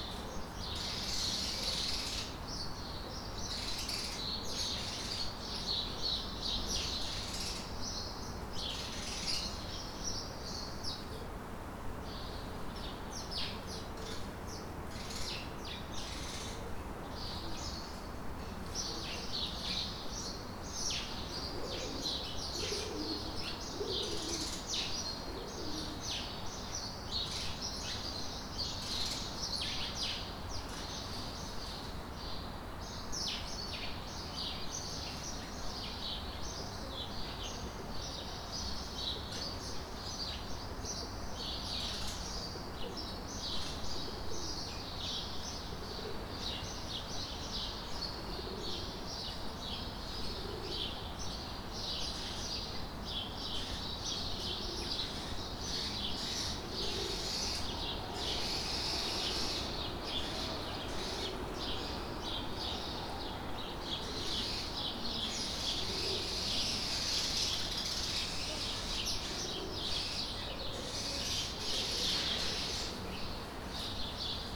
Boulevard de Lyon, Strasbourg, France - Birds and wind - courtyard
The birds in my courtyard, in the morning of the first day of confinment in France.
Recorded with ZOOM H1 on my balcony.
France métropolitaine, France